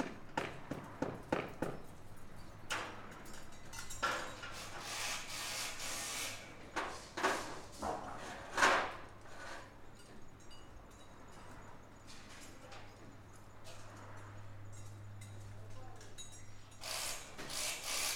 Nabeyamamachi, Nakama, Fukuoka, Japan - Wooden House Construction
A group of builders completing the frame of a modern wooden house.